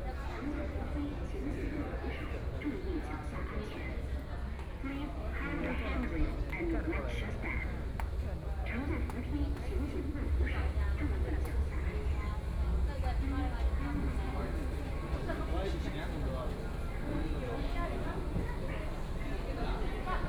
Yuyuan Garden Station, Shanghai - In the subway station
Out from the station platform to the station on the ground floor outdoor, Binaural recording, Zoom H6+ Soundman OKM II